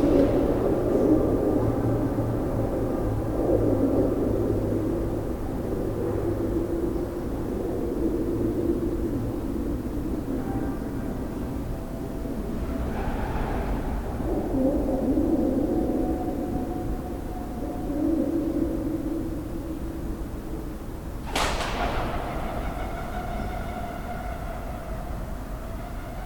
Siracusa, IT, Parco Archeologico - Orecchio di Dionisio
The 'Ear of Dionysius', an ancient artifical cave in Siracusa, Sicily: doves, ambience, siren sounds from the town.
TASCAM DR-2d, internal mics
Siracusa SR, Italy, 20 February